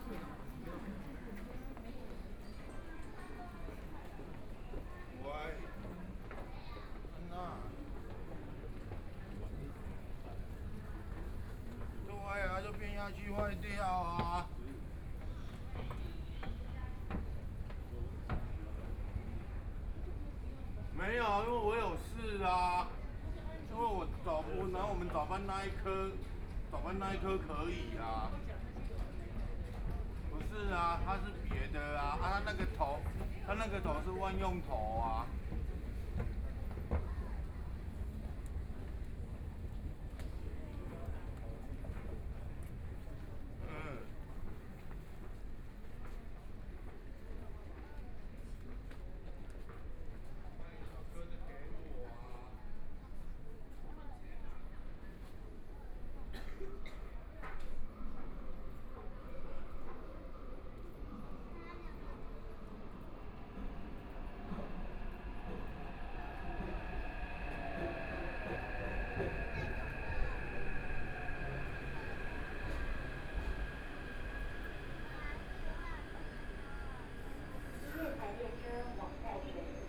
Tamsui Line, Taipei City - Tamsui Line (Taipei Metro)
from Minquan West Road Statio. to Mingde Station, Binaural recordings, Zoom H4n + Soundman OKM II
Taipei City, Taiwan, 21 January, 20:31